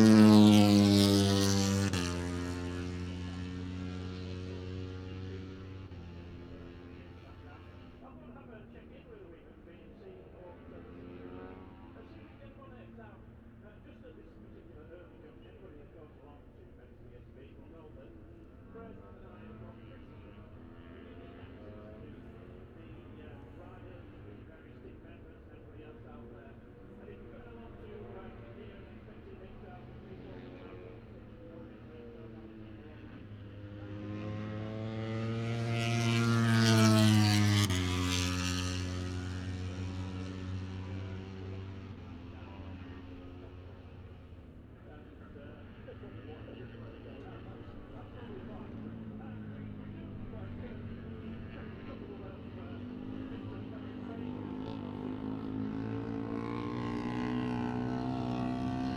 British Motorcycle Grand Prix ... moto three ... free practice two ... lavalier mics clipped to a sandwich box ...